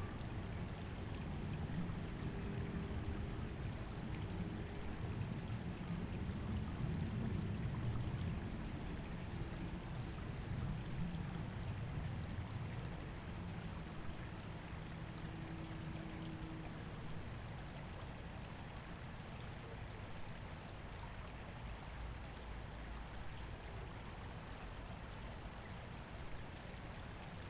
Trumbull, CT, USA - Sounds of a Bird Feeder, CT
Crocus Lane, Avon, Connecticut
Sounds of a Bird Feeder. Mainly red cardinals.
by Carlo Patrão